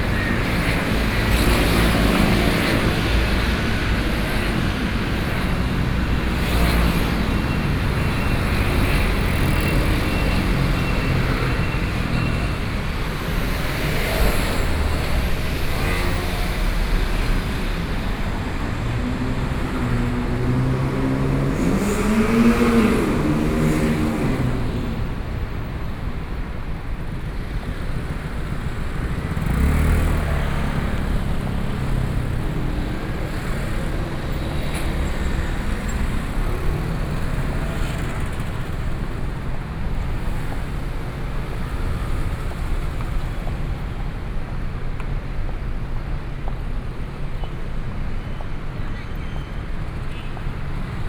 Civic Blvd., Taipei City - Traffic Sound
Traffic Sound
Binaural recordings
Sony PCM D100+ Soundman OKM II
Daan District, Taipei City, Taiwan, 2 May